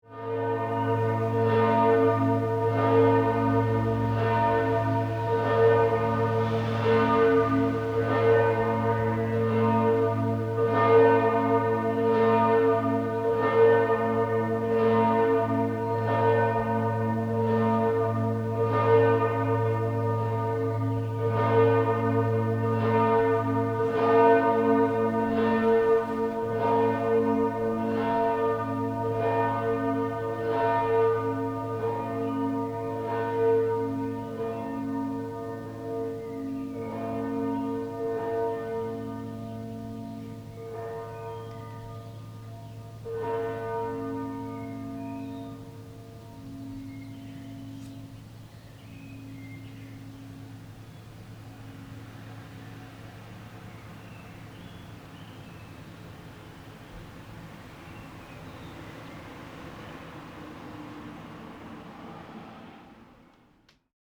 Recorded from my bedroom window at the Hotel Ochsen at 7am.
Wil, Switzerland, 20 May